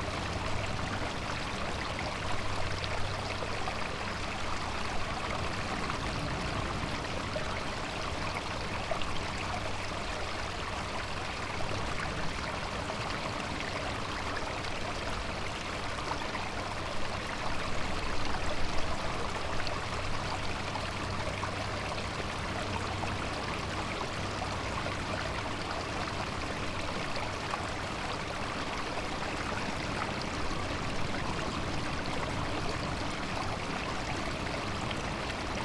Cleveland Way, Whitby, UK - water flowing from a culvert ...
water flowing from a culvert ... SASS ... background noise ...
England, UK, 12 July 2019, 10:25